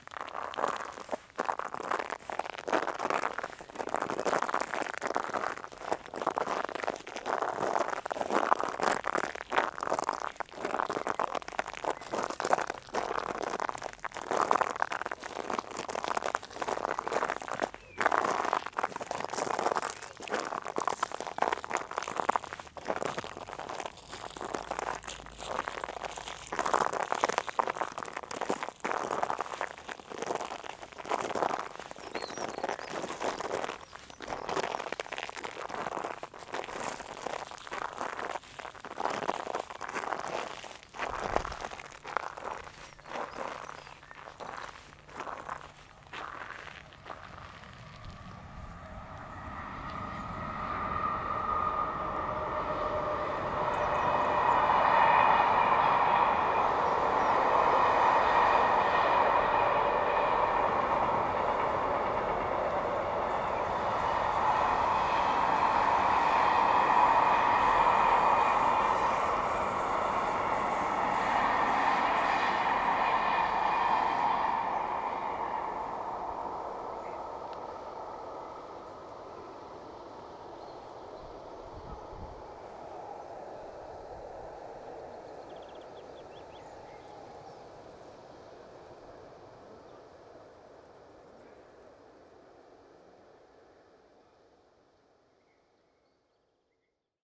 Walking in dried mud. After the floods, a large layer of mud was parched here. It makes some mud platelets. Walking in there makes some special sounds. Some consider it's an asmr sound.